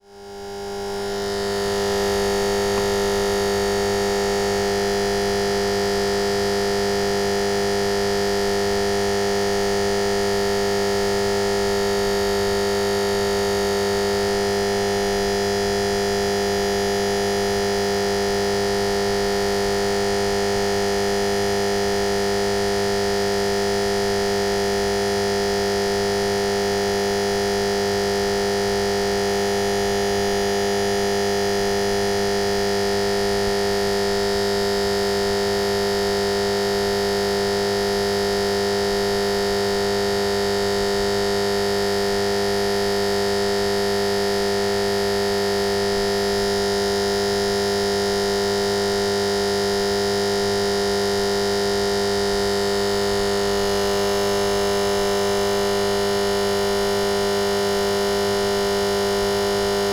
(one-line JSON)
{"title": "Poznan, Strozynskiego street - electric box", "date": "2015-08-29 23:24:00", "description": "an electric box buzzing angrily through the night.", "latitude": "52.47", "longitude": "16.91", "altitude": "99", "timezone": "Europe/Warsaw"}